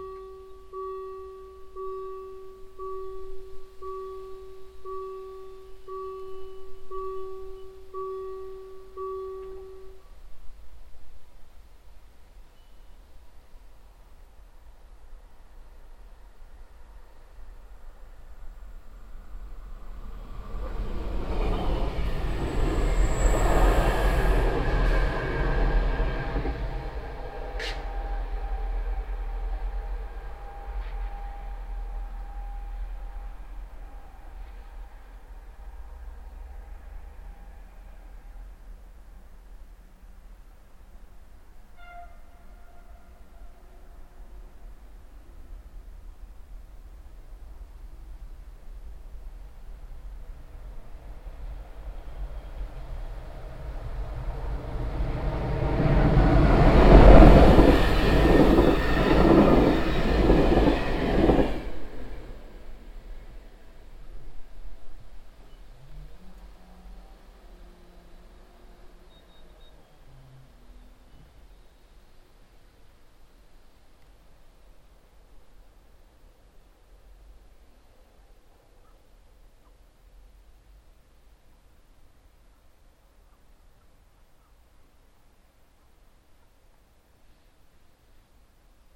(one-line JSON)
{"title": "enscherange, railroad crossing with gates", "date": "2011-09-13 13:24:00", "description": "At a railroad crossing with gates. The sound of an alarm signal and the closing of the gates then one train passing by - the sound of train hooting in the valley and then another train passing by in the opposite direction.\nEnscherange, Bahnübergang mit Bahnschranken\nEin einem Bahnübergang mit Bahnschranken. Das Geräusch eines Warnsignals und das Schließen der Schranken, dann fährt ein Zug vorbei - das Geräusch des Zugs, der im Tal tutet, dann fährt ein anderer Zug in die entgegengesetzte Richtung vorbei.\nEnscherange, passa à niveau avec barrières\nSur un passage à niveau avec barrières. Le son d’un signal d’alerte et la fermeture des barrières quand un train passe – le bruit du train klaxonnant dans la vallée et ensuite, celui d’un autre train passant dans la direction opposée.", "latitude": "50.00", "longitude": "5.99", "altitude": "320", "timezone": "Europe/Luxembourg"}